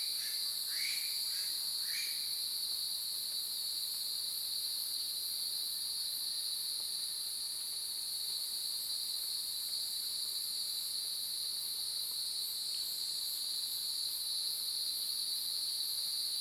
華龍巷, 魚池鄉五城村, Taiwan - Cicada and Bird sounds
Cicada sounds, Bird sounds
Zoom H2n Spatial audio